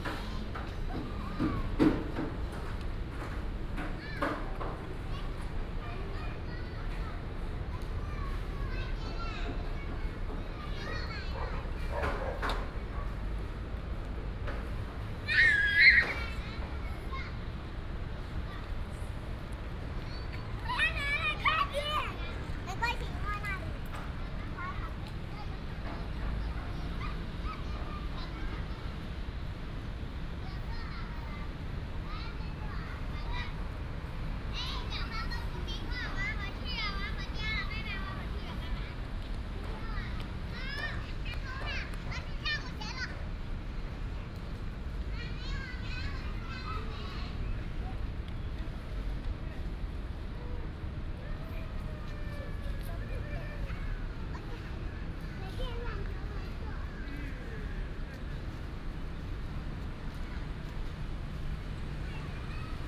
in the park, Dog sound, Childrens play area, traffic sound, Construction sound, Binaural recordings, Sony PCM D100+ Soundman OKM II
27 September 2017, 17:18